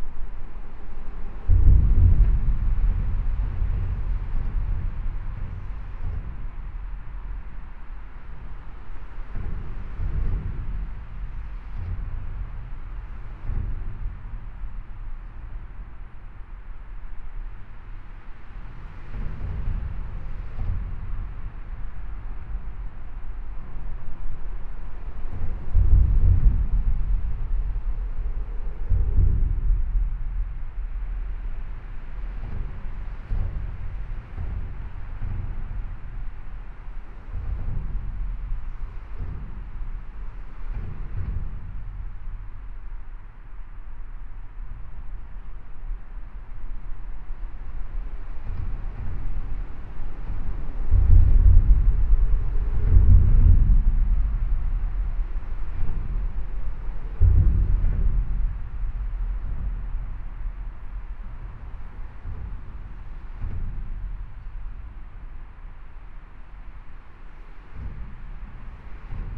Bruxelles, Belgium - Vilvoorde viaduct
Below the Vilvoorde viaduct. Sound of the traffic. I'm dreaming to go inside and one day it will be true !